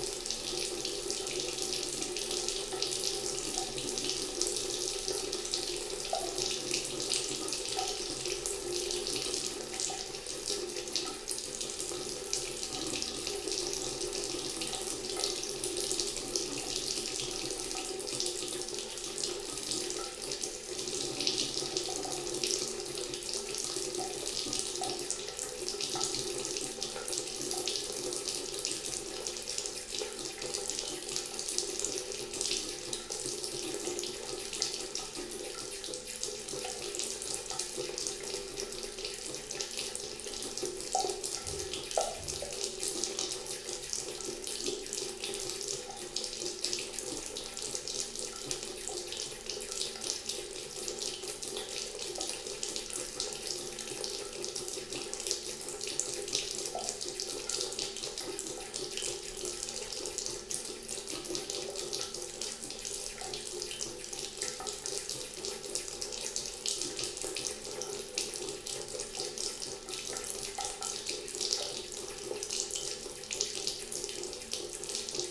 Ametisthorst, Den Haag, Nederland - Running watertab
Recorder with a Philips Voice Tracer DVT7500